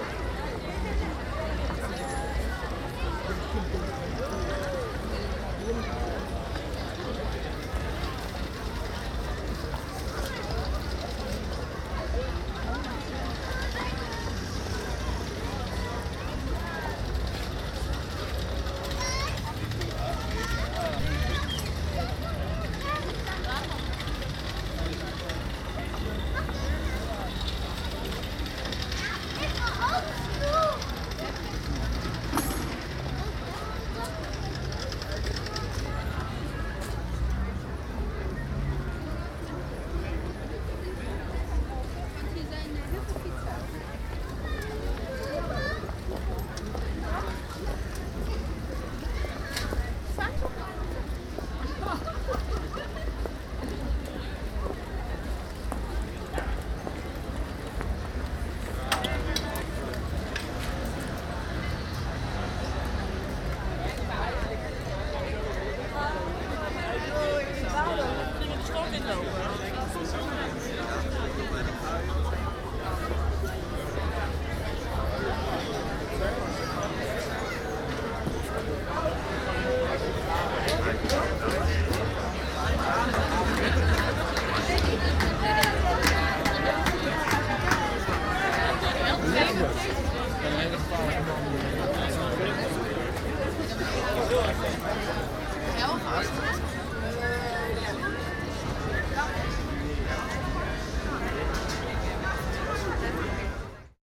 Kortenbos, Den Haag, Nederland - Leisure time at the Grote Markt
Binuaral recording of the general atmosphere.
Den Haag, Netherlands, 23 March 2015, ~16:00